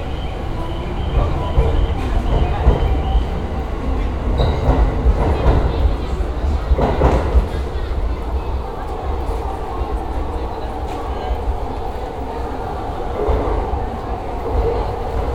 tokyo, akihabara station
at akihabara station, general atmosphere, anouncemts and a train driving in
international city scapes - social ambiences and topographic field recordings